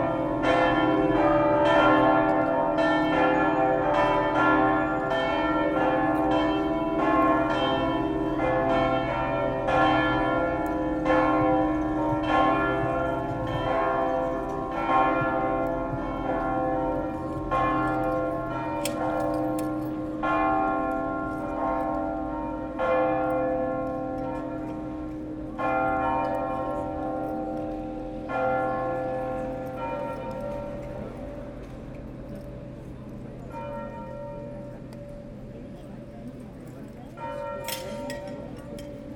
Tours, France - Cathedral bells
The Saint-Gatien cathedral bells. It's nothing else than cauldrons, this bells have a pure bad sound, not very respectable for a big cathedral like that.
13 August